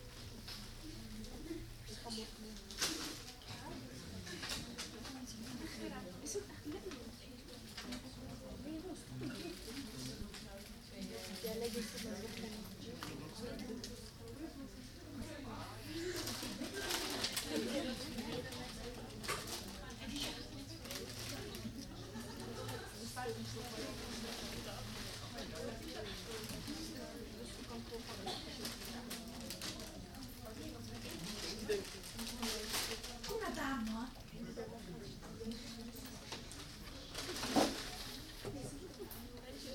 Uilebomen, Den Haag, Nederland - Conversations in the library
People talking in our Central Public Library.
24 June, 17:58